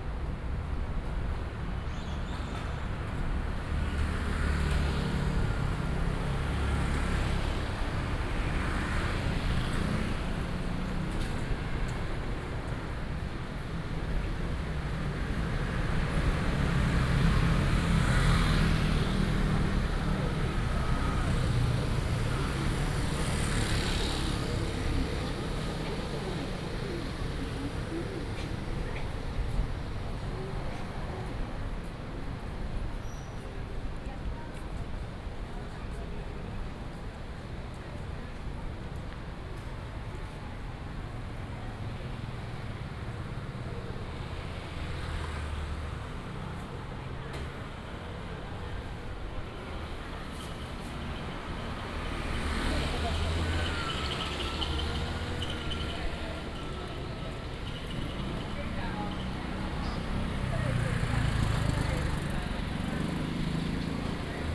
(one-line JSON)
{"title": "Guangming St., Xindian Dist., New Taipei City - Walking on the road", "date": "2015-07-25 18:04:00", "description": "From the main road to the small street, Various shops, traffic sound", "latitude": "24.96", "longitude": "121.54", "altitude": "29", "timezone": "Asia/Taipei"}